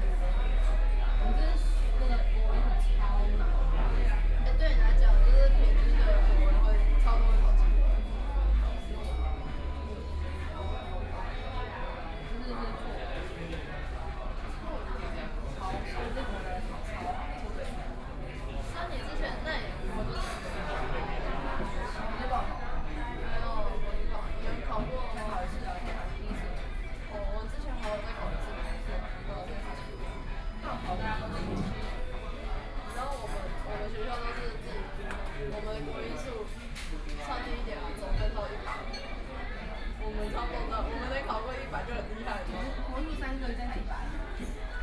Guangming Rd., Beitou Dist. - In the restaurant
in the Yoshinoya, Ordering counter, Dialogue between high school students, Binaural recordings, Sony PCM D50 + Soundman OKM II